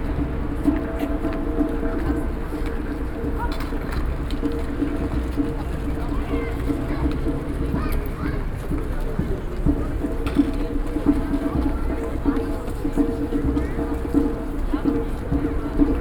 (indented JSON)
{
  "title": "Katharinen-Treppe, Dortmund, Germany - onebillionrising, steps n drum...",
  "date": "2018-02-14 16:00:00",
  "description": "...starting at the St Katherine steps and walking towards the meeting point for the onebillionrising dance/ campaign / “flash mop”; enjoying the sound of steps rushing upstairs and downstairs; the sound of a drum getting closer, voices louder…\nglobal awareness of violence against women",
  "latitude": "51.52",
  "longitude": "7.46",
  "altitude": "87",
  "timezone": "Europe/Berlin"
}